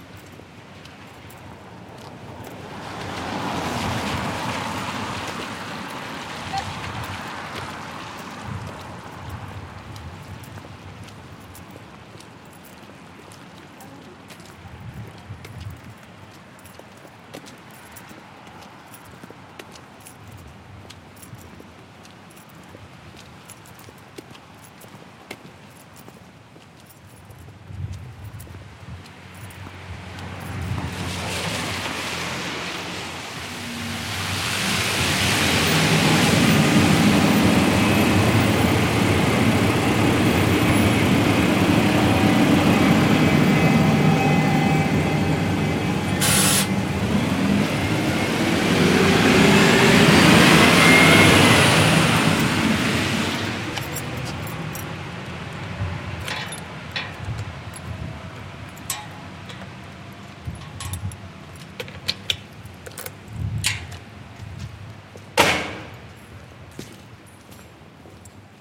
Toulouse, Croix-Daurade district
Walking around with my recorder
24 October 2010, ~17:00